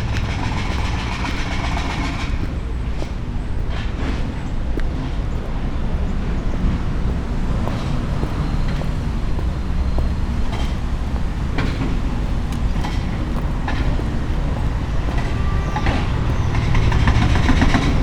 Alexanderplatz, Mitte, Berlin, Germany - walking, construction site
Sonopoetic paths Berlin
4 September 2015